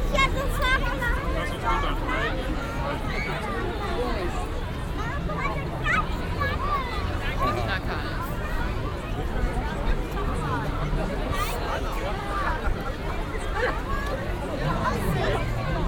cologne, altstadt, heumarkt, weltkindertag 08
soundmap nrw: social ambiences, art places and topographic field recordings